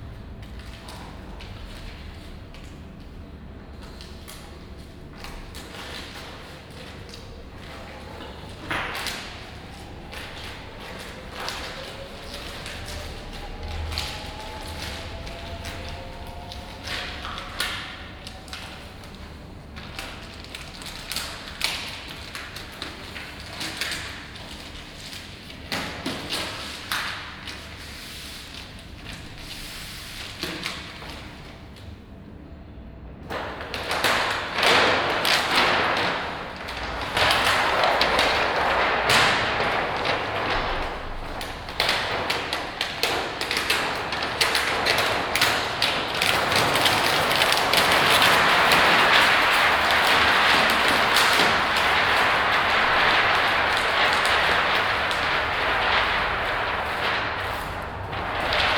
Buntentor, Bremen, Deutschland - bremen, schwankhalle, foyer
Inside the Theatre foyer. The sound of plastic colour folio being rolled, finally a door.
soundmap d - social ambiences and topographic field recordings